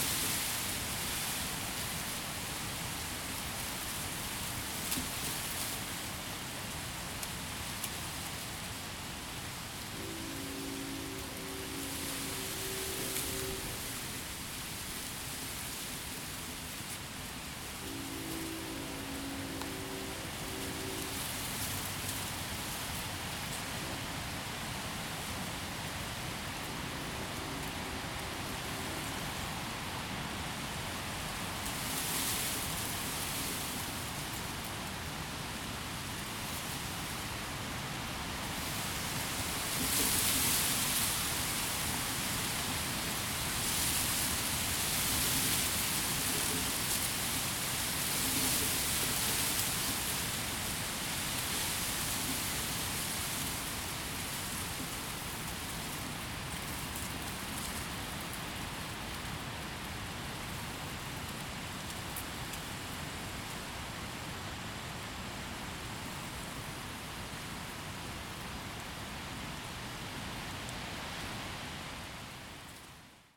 Castlewood Loop, Eureka, Missouri, USA - Tall Grass
Wind gusts through tall dry grass. Distant train horn starts at 1:23.
17 October, 16:13